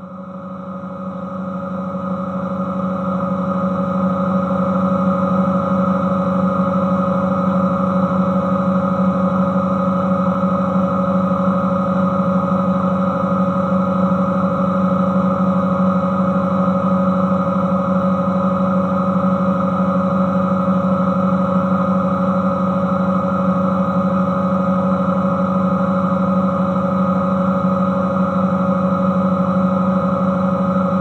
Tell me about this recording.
This factory is using biogas in aim to produce energy. Gas comes from the biggest dump of Belgium. Recording of a biogas generator, using contact microphone placed on a valve.